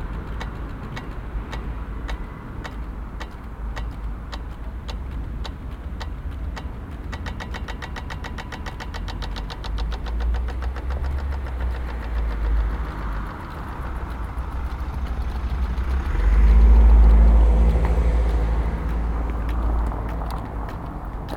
Early in the morning with yet empty streets, rhythmic traffic cicadas goes on.
Recorded with Soundman OKM on Zoom H2n